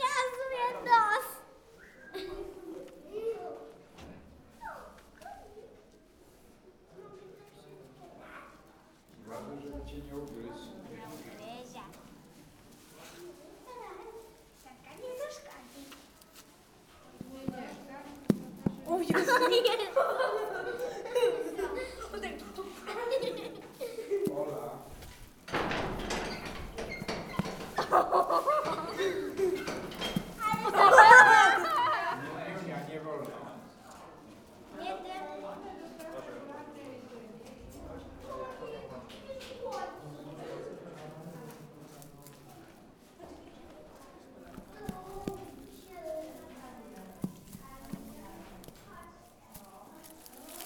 Szreniawa, National Museum of Farming, horse stall - feeding a horse
feeding a horse at its stall. a few snaps of its jaw and chewing are audible. kids excited about the presence of the animal as well as its behavior. as i was holding the recorder right in front of the horse muzzle they though i was interviewing the animal.